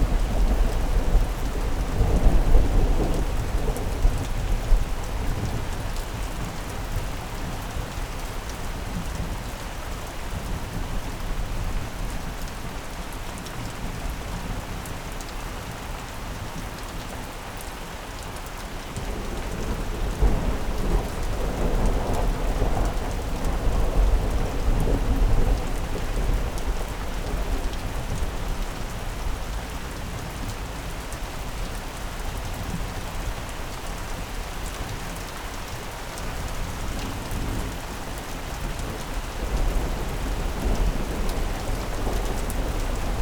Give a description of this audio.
A thunderstorm with heavy rain that almost washed out my recording kit. Recorded with a Sound Devices Mix Pre 6 II and 2 Sennheisre MKH 8020s.